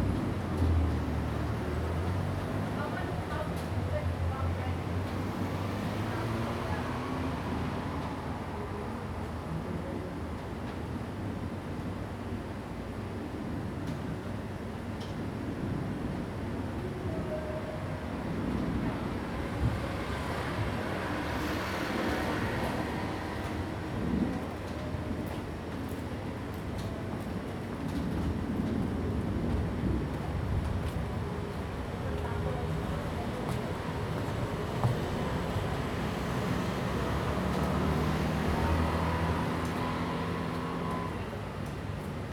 Bitan Rd., 新店區, New Taipei City - around the corner
Light rain, Thunder sound, Traffic Sound
Zoom H2n MS+ XY
28 July 2015, ~15:00